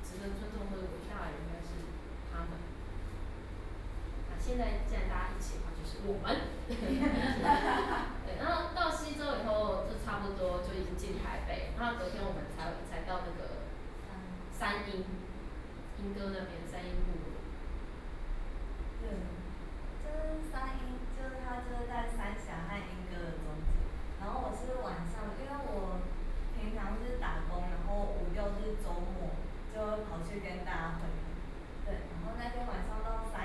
tamtamART.Taipei, Taipei City - Forum
Forum, Share hiking eastern Taiwan environmental changes and problems, Sony PCM D50 + Soundman OKM II
16 June 2013, ~17:00